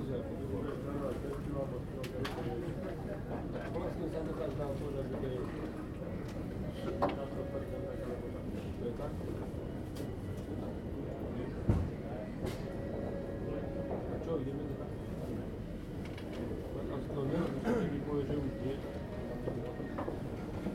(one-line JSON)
{"title": "Stockholm Arlanda International Airport / Terminal 2 - 3 - 4 - 5, 190 60 Stockholm-Arlanda, Sweden - Waiting for departure", "date": "2018-12-16 07:38:00", "description": "Waiting for my flight. Luggage noises, airport announcement in Swedish and English, people talking.\nRecorded with Zoom H2n, 2 channel stereo mode", "latitude": "59.65", "longitude": "17.93", "altitude": "34", "timezone": "Europe/Stockholm"}